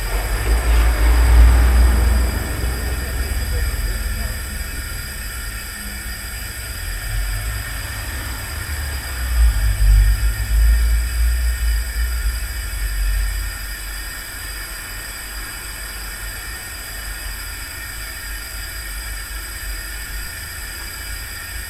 Sandėlių g., Kaunas, Lithuania - Large gas box
Combined stereo field and dual contact microphone recording of a big industrial gas pipe box. Steady hum of gas + cars driving nearby. Recorded with ZOOM H5.